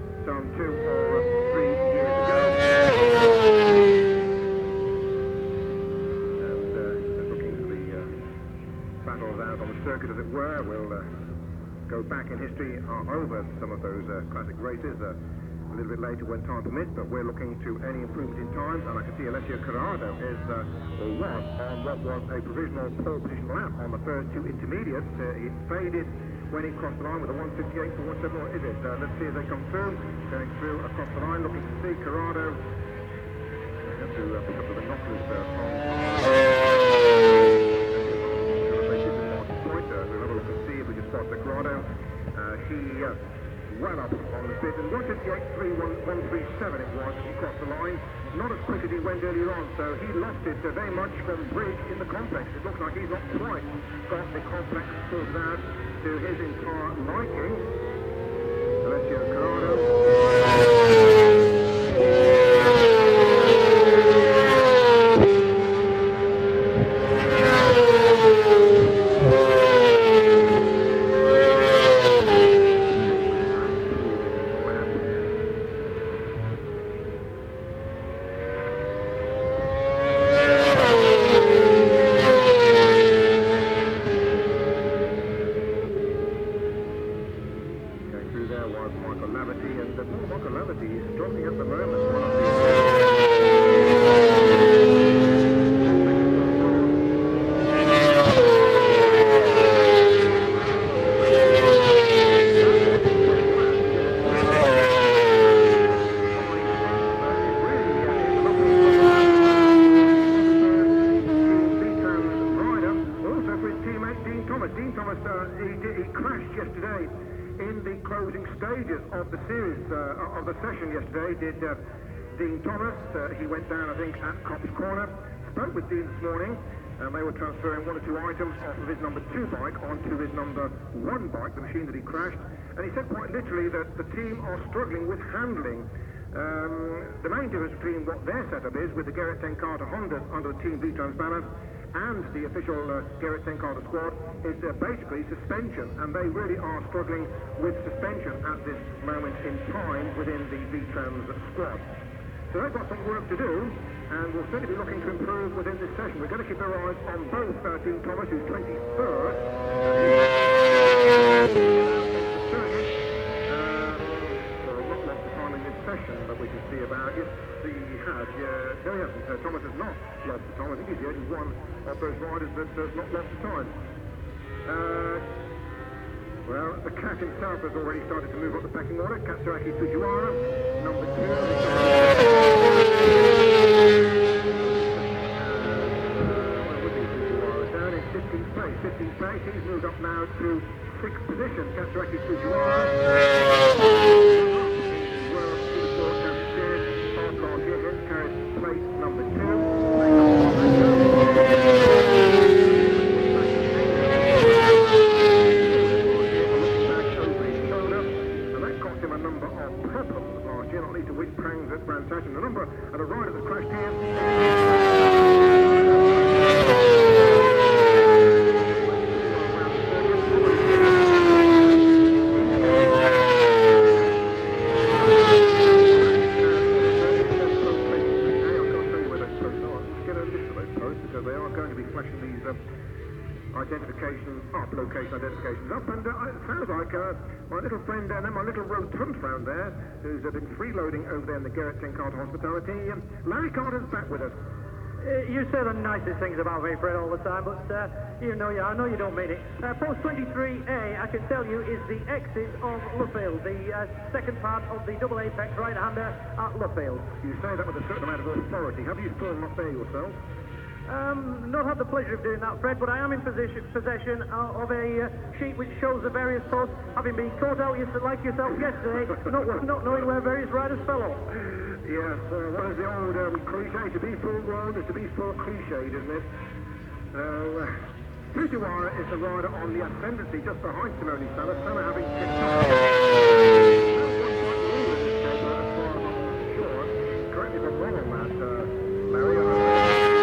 Silverstone Circuit, Towcester, UK - WSB 2003 ... Supersports ... Qualifying ...
WSB 2003 ... Supersports Qualifying ... one point stereo to mini-disk ... date correct ... time optional ...